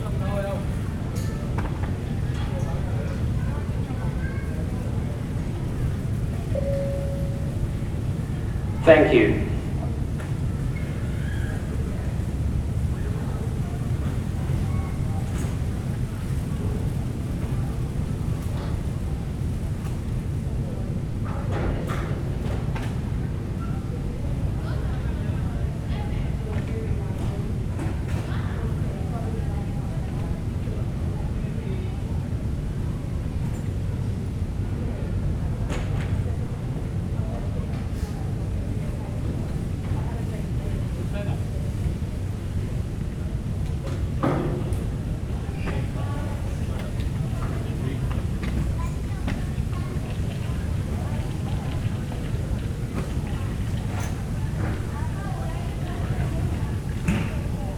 neoscenes: minutes silence for NZ miners